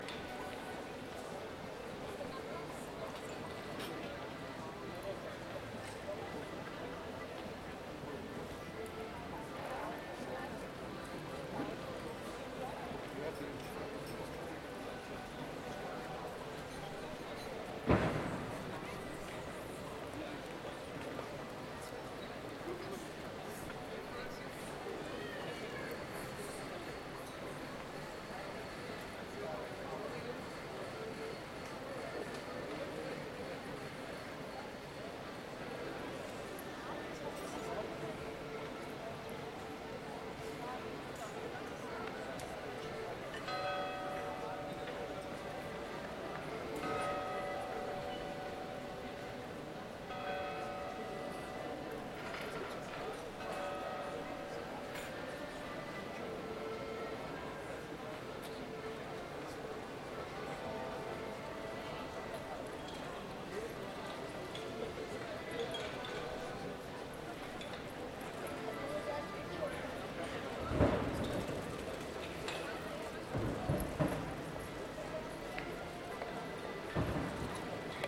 stuttgart, kleiner schlossplatz
sunday on the Kleiner Schlossplatz
Stuttgart, Germany